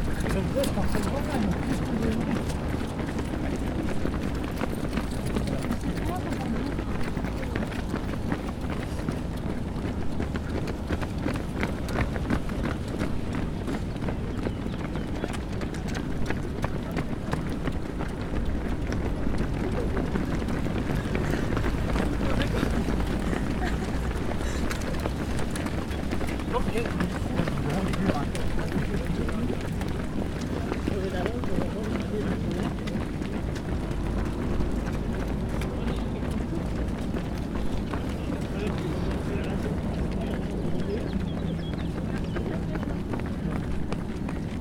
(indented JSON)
{
  "title": "Voie Lacustre, lac du Bourget 73100 Tresserve, France - course pédestre",
  "date": "2013-04-14 09:35:00",
  "description": "Au bord du lac du Bourget sur la voie lacustre réservée ce jour là pour une course à pied, les 10km du lac organisée par l'ASA Aix-les-bains la symphonie des foulées et des souffles dans l'effort, avec en arrière plan la circulation routière .",
  "latitude": "45.69",
  "longitude": "5.89",
  "altitude": "235",
  "timezone": "Europe/Paris"
}